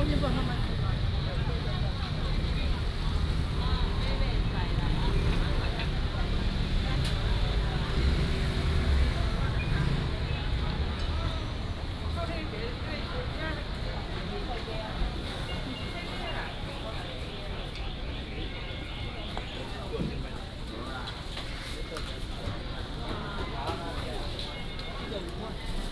水仙宮市場, Tainan City - Walking in the market
Old market, Walking in the traditional market